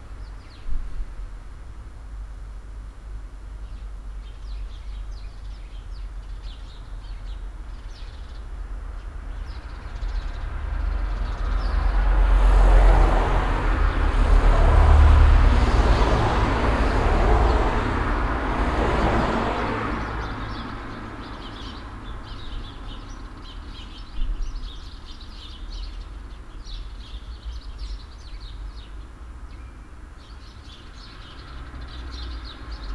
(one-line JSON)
{"title": "hosingen, haaptstrooss, traffic", "date": "2011-09-13 12:05:00", "description": "Street traffic in the center of the village recorded on a windy summer evening nearby a tree with a bird-nest of young sparrows. Music from a car radio.\nHosingen, Haaptstrooss, Verkehr\nStraßenverkehr im Ortszentrum, aufgenommen an einem windigen Sommerabend nahe einem Baum mit einem Vogelnest mit jungen Spatzen. Musik von einem Autoradio.\nHosingen, Haaptstrooss, trafic\nBruit du trafic dans le centre du village enregistré un soir d’été venteux a proximité d’un arbre avec un nid de jeunes moineaux. On entend la musique d’un autoradio.", "latitude": "50.02", "longitude": "6.09", "altitude": "504", "timezone": "Europe/Luxembourg"}